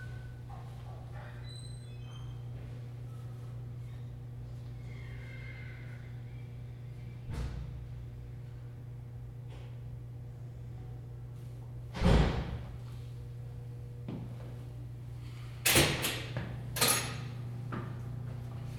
Muhlenberg College Hillel, West Chew Street, Allentown, PA, USA - Muhlenberg College Prosser Hall Stairwell
This is a recording of the stair well inside a freshman dorm at Muhlenberg College.
2 December 2014